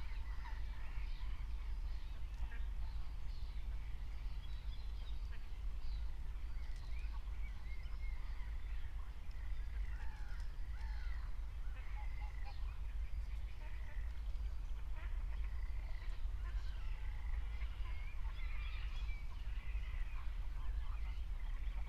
20:51 Berlin, Buch, Moorlinse - pond, wetland ambience
Deutschland